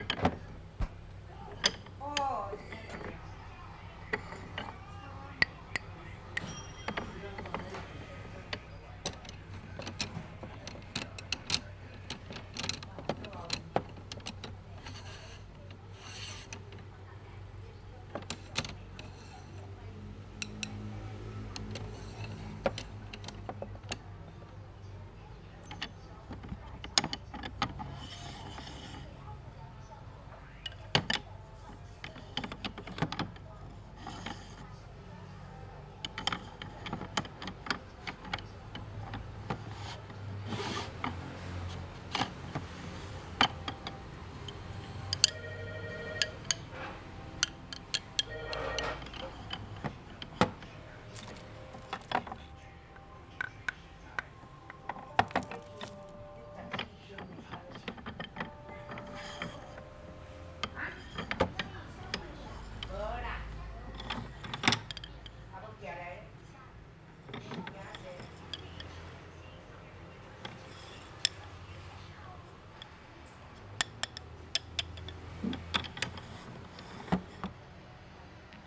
Fu-Ming-Zhai 福鳴齋工藝社 - Customer testing the drumstick
Customer testing the drumstick in the traditional instrument store.
Tainan City, Taiwan